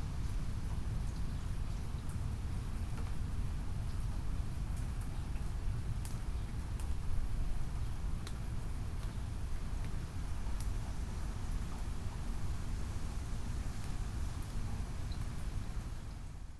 Eastside, Milwaukee, WI, USA - thunderstorm, WLD 2015